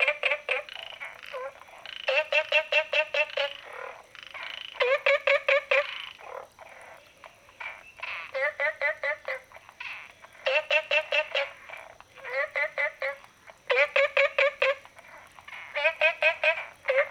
綠屋民宿, Puli Township - Small ecological pool
Frogs chirping, Small ecological pool
Zoom H2n MS+XY
Nantou County, Taiwan, 9 June 2015, 22:55